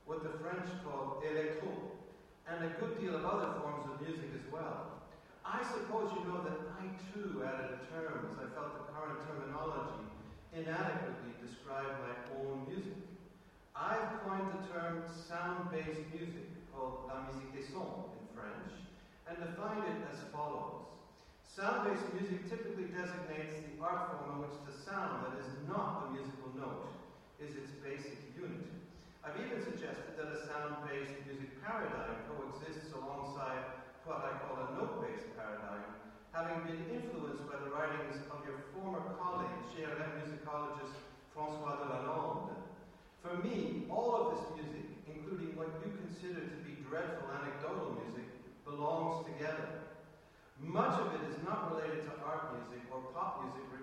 Rijeka, Leigh Landy ConversationWith Pierre Schaeffer - iConference PS2010
Rijeka, Croatia